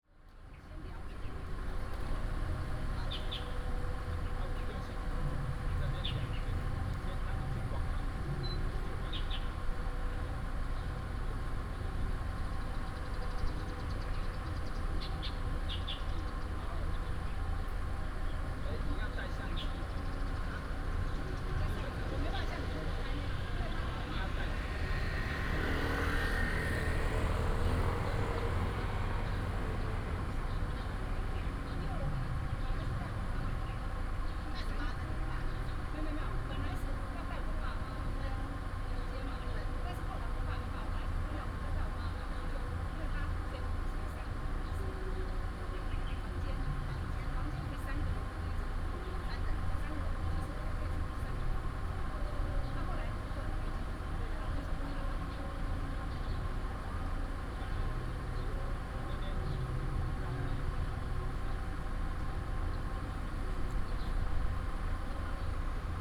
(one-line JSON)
{"title": "新屋福興宮, Taoyuan City - In the square of the temple", "date": "2017-09-21 10:58:00", "description": "In the square of the temple, Bird call, Tourists, Hot weather, Binaural recordings, Sony PCM D100+ Soundman OKM II", "latitude": "24.94", "longitude": "120.99", "altitude": "9", "timezone": "Asia/Taipei"}